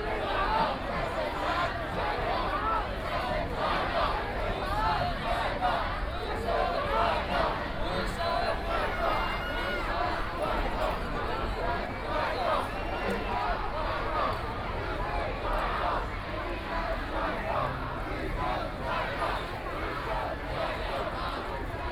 淡水區, New Taipei City - Holiday
A lot of tourists, Protest crowd walking through
Please turn up the volume a little. Binaural recordings, Sony PCM D100+ Soundman OKM II